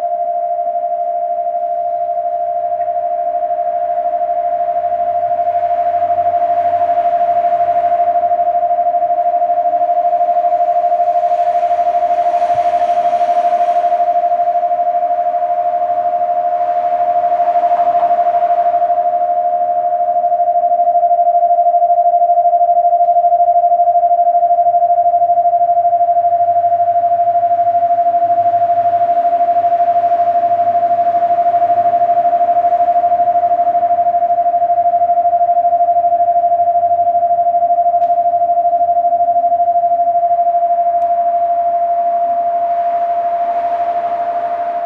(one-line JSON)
{"title": "Havenkant, Leuven, Belgien - Leuven - Maaklerplek - sound installation", "date": "2022-04-23 18:30:00", "description": "Near the street side of the Havenkant - the sound of a sound installation by Amber Meulenijzer entitled \"Saab Sculpzure VI\" - part of the sound art festival Hear/ Here in Leuven (B).The sound of an old Saab car with several speakers on top of the roof.\ninternational sound scapes & art sounds collecion", "latitude": "50.89", "longitude": "4.70", "altitude": "29", "timezone": "Europe/Brussels"}